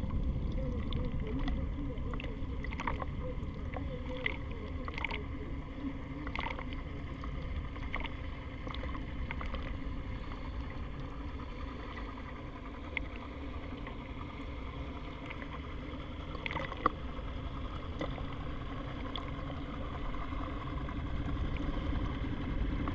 Sopot, Poland, contact with the pier
contact microphones on wooden constructions of pier.